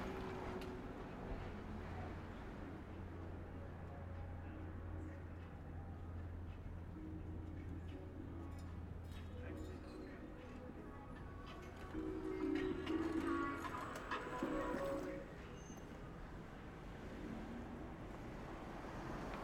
Woodward Ave, Ridgewood, NY, USA - Early Afternoon in Ridgewood, Queens
Traffic sounds on the intersection between Woodward Ave and Cornelia St. in Ridgewood, Queens.